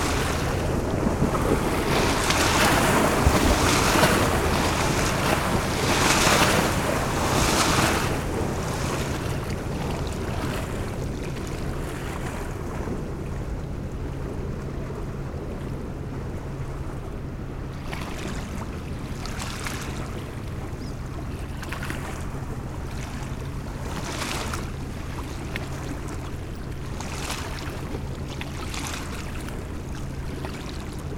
Heurteauville, France - Boats
Two boats passing by on the Seine river, The Nirvana, a barge coming from Lyon, and the Beaumonde, a cargo transporting containers.